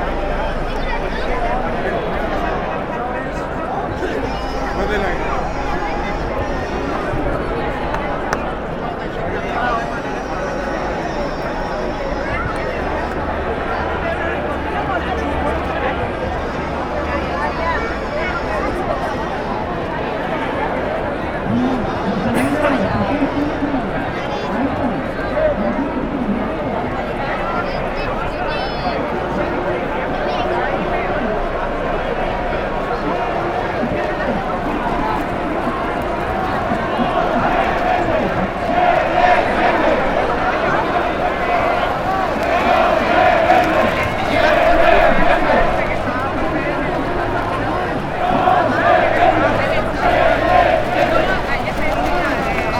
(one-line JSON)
{"title": "Sant Francesc, Valencia, Valencia, España - 1 de Mayo", "date": "2015-05-01 11:35:00", "description": "1 De mayo", "latitude": "39.47", "longitude": "-0.38", "altitude": "24", "timezone": "Europe/Madrid"}